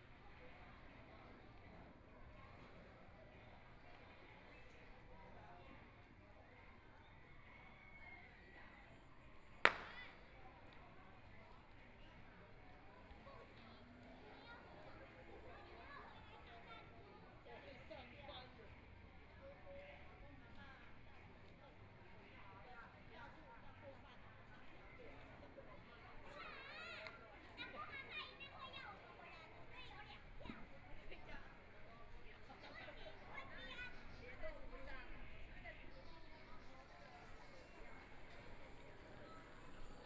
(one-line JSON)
{"title": "蕃薯村, Shueilin Township - Hamlet", "date": "2014-01-31 20:15:00", "description": "Traditional New Year, The plaza in front of the temple, The sound of firecrackers, Motorcycle sound, Very many children are playing games, Zoom H4n+ Soundman OKM II", "latitude": "23.54", "longitude": "120.22", "timezone": "Asia/Taipei"}